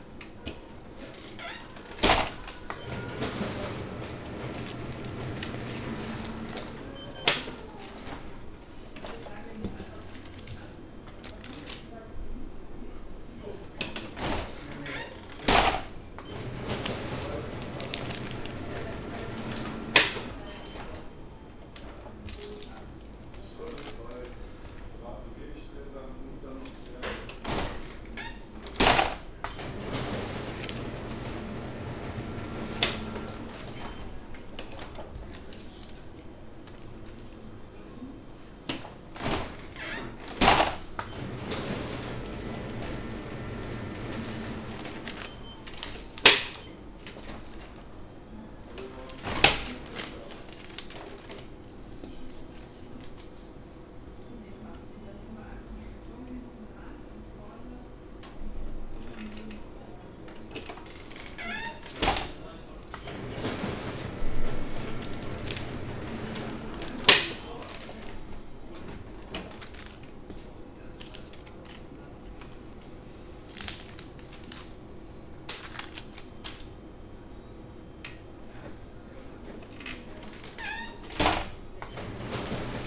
{
  "title": "cologne, mainzerstr, kopiergeschäft - cologne, mainzerstr, kopiergeschäft",
  "date": "2008-12-31 22:22:00",
  "description": "öffnen und schliessen des automaten, der kopiervorgang, im hintergrund atmo anderer kopiervorgänge\nsoundmap nrw: social ambiences/ listen to the people - in & outdoor nearfield recordings",
  "latitude": "50.92",
  "longitude": "6.96",
  "altitude": "53",
  "timezone": "Europe/Berlin"
}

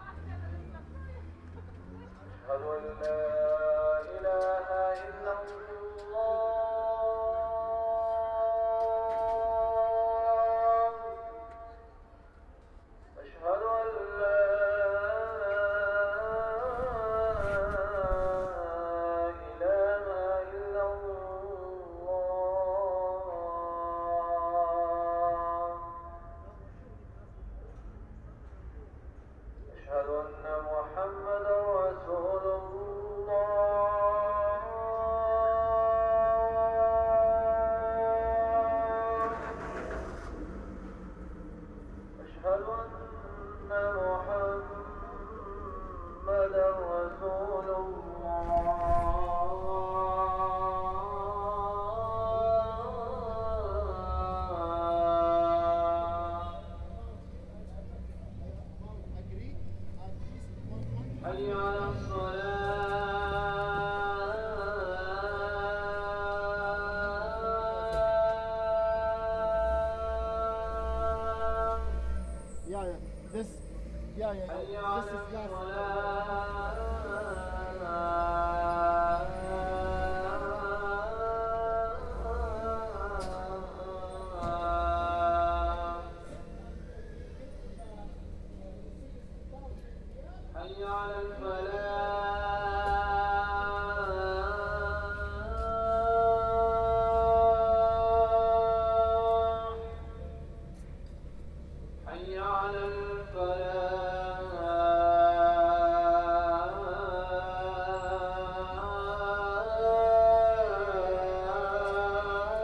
{
  "title": "ул. Малыгина, Махачкала, Респ. Дагестан, Россия - evening adhan",
  "date": "2020-09-05 16:50:00",
  "description": "Evening adhan. Idris Khazhi Mosque in Makhachkala. Recorder: Tascam DR-40.",
  "latitude": "42.98",
  "longitude": "47.51",
  "timezone": "Europe/Moscow"
}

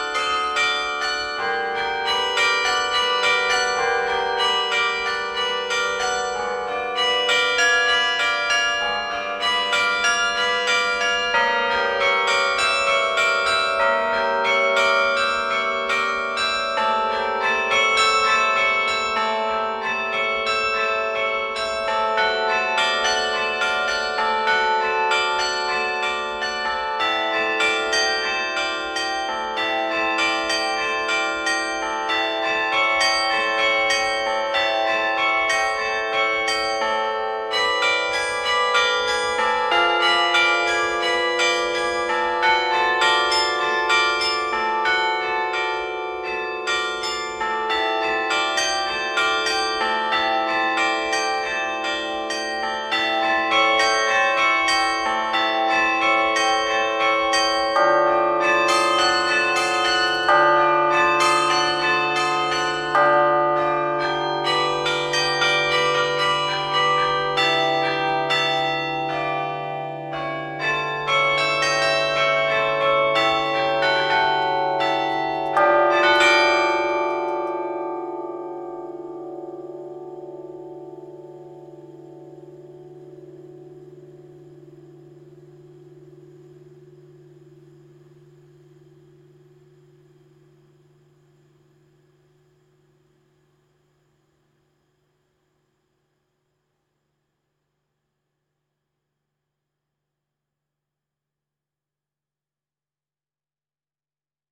{
  "title": "Pl. du Général Leclerc, Avesnes-sur-Helpe, France - Carillon - Avesnes-sur-Helpe",
  "date": "2020-06-24 15:00:00",
  "description": "Avesnes-sur-Helpe - Département du Nord\nCarillon - église d'Avesnes\nMaître carillonneur : Monsieur Nimal",
  "latitude": "50.12",
  "longitude": "3.93",
  "altitude": "178",
  "timezone": "Europe/Paris"
}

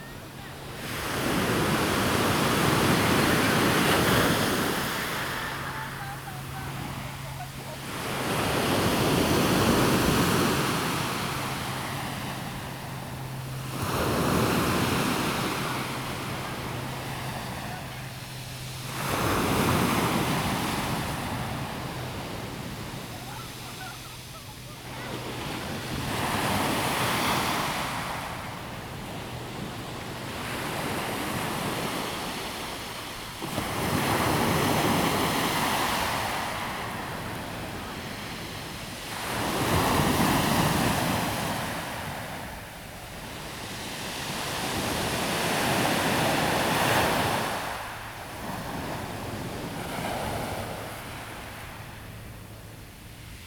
{
  "title": "Qixingtan Beach, Hualien County - sound of the waves",
  "date": "2016-07-19 10:35:00",
  "description": "sound of the waves\nZoom H2n MS+XY +Sptial Audio",
  "latitude": "24.03",
  "longitude": "121.63",
  "altitude": "4",
  "timezone": "Asia/Taipei"
}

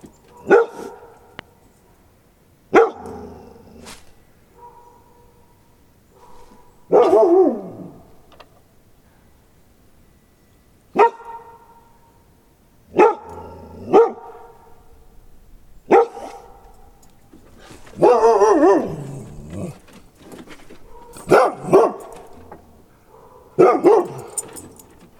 {
  "title": "Lehigh, PA, USA - dog barking in forest",
  "date": "2012-12-26 03:44:00",
  "latitude": "41.29",
  "longitude": "-75.42",
  "timezone": "America/New_York"
}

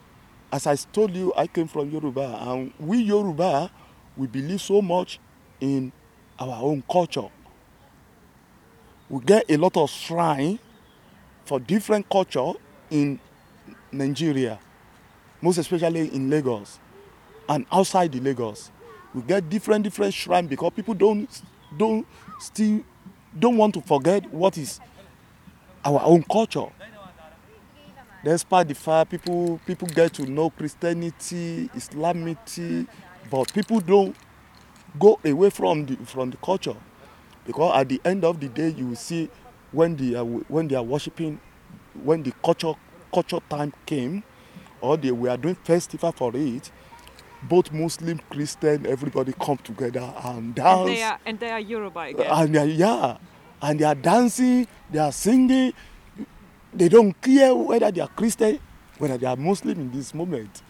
{
  "title": "Nordring, Hamm, Deutschland - Play ground recording",
  "date": "2011-06-18 19:30:00",
  "description": "The Nigeria Artist, drummer, educator, cultural-Producer talks to Radio continental about where he is coming from culturally, now based in Germany.His interaction with People",
  "latitude": "51.68",
  "longitude": "7.82",
  "altitude": "62",
  "timezone": "Europe/Berlin"
}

